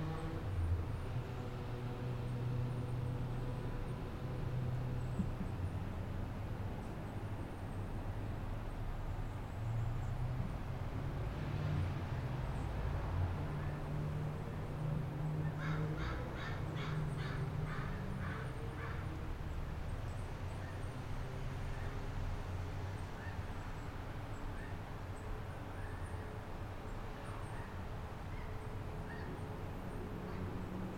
A field recording from the center of Nancy Holt's sculpture 'Rock Rings' situated on the south end of the campus of Western Washington University.
(Unfortunately, due to shadowing on G-Maps' satellite view the sculpture is not visible)
The sculpture is composed of two concentric walls made of rock and mortar, roofless with round 'windows' to the outside.
The simplicity and lack of symbols or overt meaning cause 'Rock Rings' to suggest a ruined dwelling or temple.
Like many other works of earth art, when 'Rock Rings' was originally creates it was situated in a relatively secluded area. As campus has grown, and the area has become more busy, anthropogenic noise has come to dominate the soundscape.
Situated very close to 'Rock Rings' is another notable work of Land Art Robert Morris' 'Steamwork for Western Washington University'.
More information about Rock Rings:
Bellingham, WA, USA, 26 October 2018, 13:00